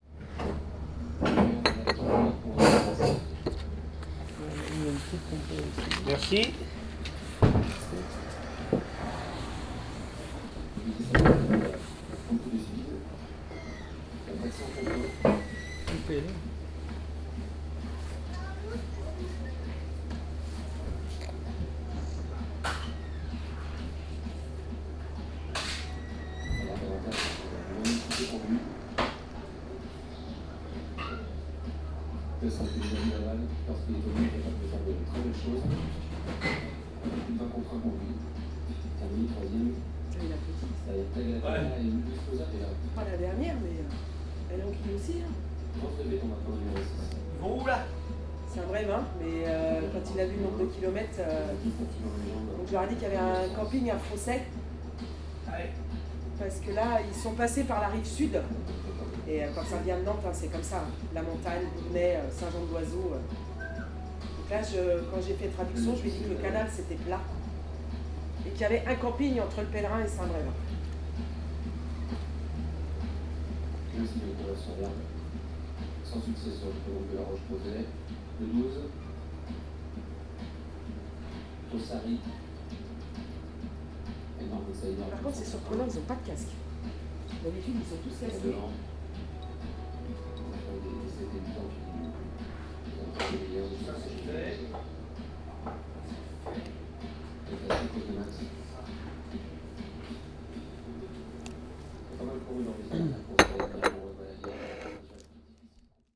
Le Pellerin, France - Coffeehouse morning
Un matin dans un café pmu autour de la piste cyclable la loire à velo.
A morning in a coffehouse around the "loire à vélo" bicycle path.
Fun fact : the only way to cross the river here is by boat.
/zoom h4n intern xy mic